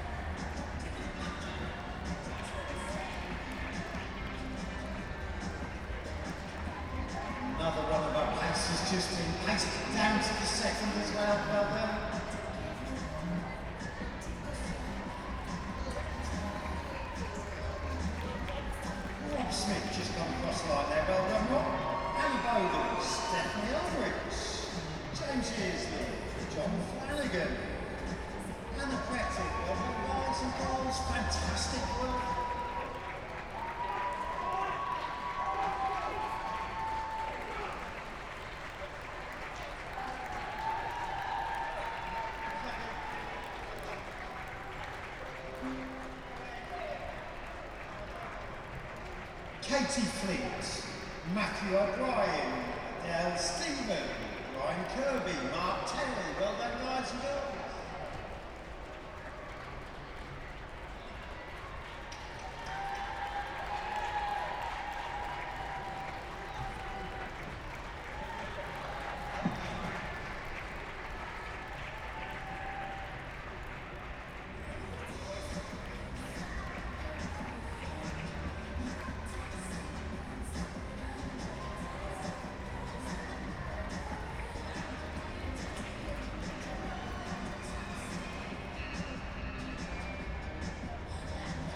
mk marathon ... close to finish in the stadium mk ... dpa 4060s clipped to bag to zoom h5 ... plenty of background noise ... levels all over the place ... two family members took part in the super hero fun run ... one member took part in the marathon ...